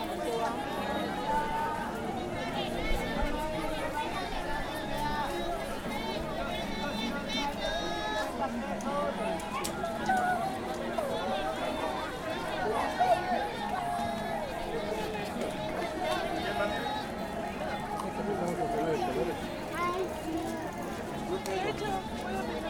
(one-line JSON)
{"title": "Av H. Colegio Militar, Bosque de Chapultepec I Secc, Ciudad de México, CDMX, México - Vendedores del Bosque de Chapultepec", "date": "2018-07-29 15:00:00", "description": "Caminata entre los puestos del Bosque de Chapultepec, justo afuera del zoológico. Domingo 15hrs.", "latitude": "19.42", "longitude": "-99.19", "altitude": "2258", "timezone": "America/Mexico_City"}